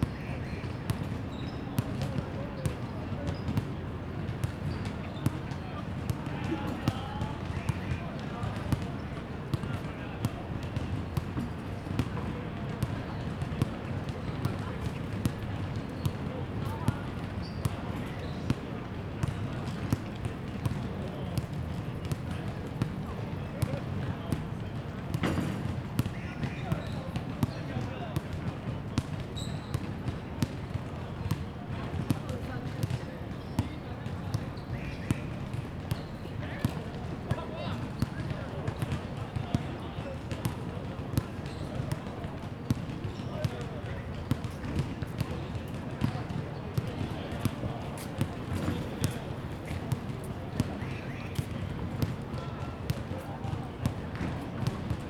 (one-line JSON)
{"title": "垂葉榕道, National Taiwan University - Chirp and Basketball Sound", "date": "2016-03-04 15:47:00", "description": "Chirp and Basketball Sound, Bicycle sound\nZoom H2n MS+XY", "latitude": "25.02", "longitude": "121.54", "altitude": "12", "timezone": "Asia/Taipei"}